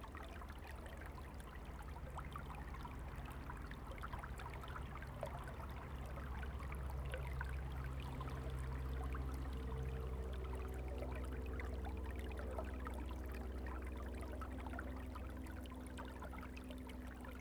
德高里, Guanshan Township - Streams waterway
In the rice fields, Traffic Sound, The sound of water, Streams waterway, Very hot weather
Zoom H2n MS+ XY